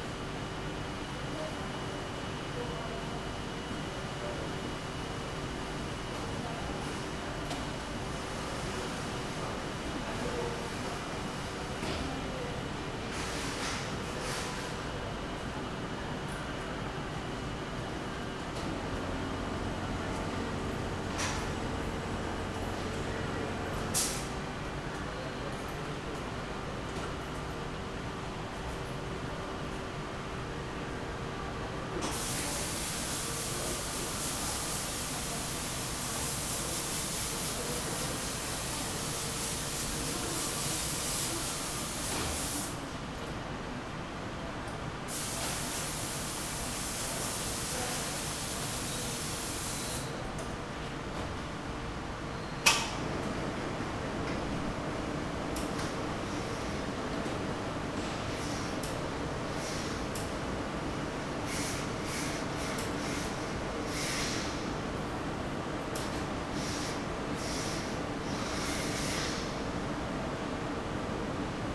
Poznan, Batorego housing estate, shopping center - laundry
employee of the laundry ironing a suit with steam iron. hum of the big commercial washing machines, dryers and ventilation system.
Poznan, Poland, 18 August 2013